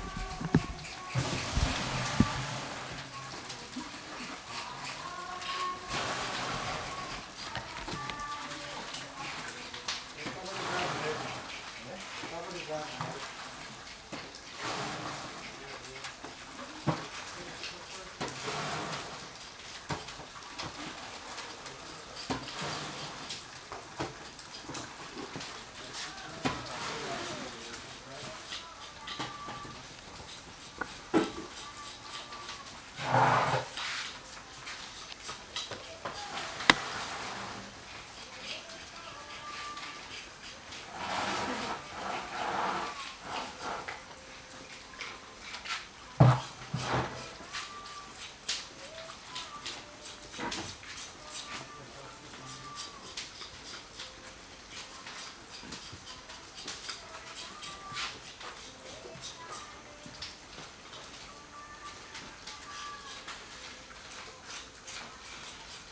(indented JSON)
{
  "title": "Mapia- Amazonas, Brazilië - women singing while handling the chakruna leaves",
  "date": "1996-07-07 08:24:00",
  "description": "Ayahuasca is made of two main ingredients: the DMT containing vine Banesteriopsis caapi and the leaves of the chakruna - Psychotria viridis. The men prepare the vines, while the women of the church prepare the leaves. In this recording we can hear the women singing in distance while we, the men, are scraping the vine.(men and women are seperated during preparing and drinking the brew.)",
  "latitude": "-8.46",
  "longitude": "-67.44",
  "altitude": "103",
  "timezone": "America/Manaus"
}